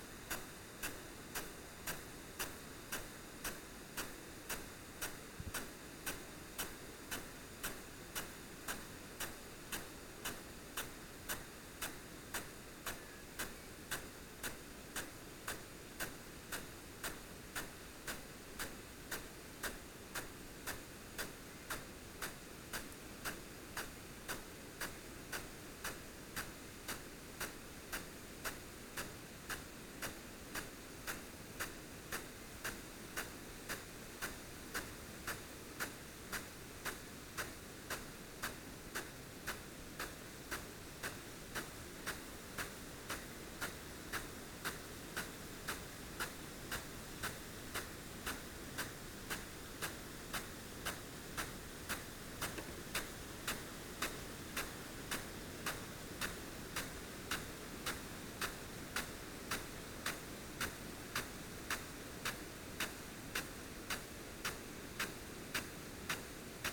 Green Ln, Malton, UK - field irrigation system ...
field irrigation system ... parabolic ... Bauer SR 140 ultra sprinkler to Bauer Rainstar E irrigation unit ... standing next to the sprinkler ... as you do ...
England, United Kingdom